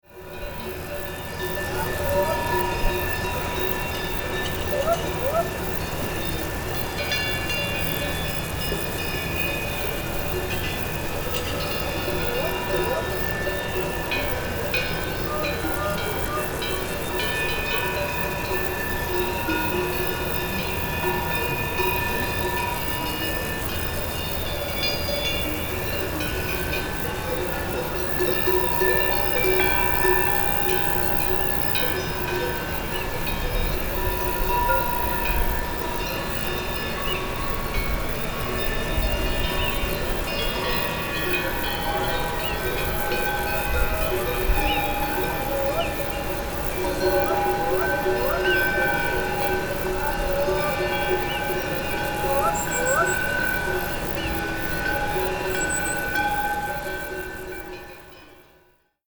Farnital, Schweiz - Farnital-Farmer
Cowbells and a farmer calling his cows in the evening. Insects making noises.
Recorded with the internal stereo mikrophone of Tascam DR-100 MKII.
Reichenbach im Kandertal, Switzerland